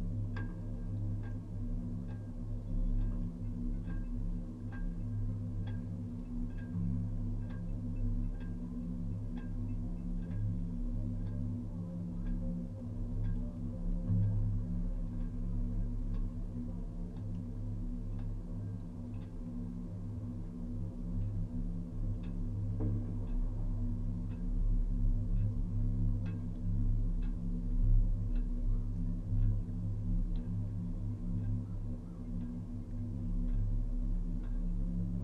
Lunenburg County, NS, Canada - Wind and waves resonating inside the metal jetty support 2
This jetty is built with large hollow metal pipes providing the main supports. Usually they are made from solid wood. The gentle wind and waves resonate inside the pipe taking on the frequencies and harmonics given by its dimensions, which are slightly different from the other pipe supports.
10 October 2015, 17:15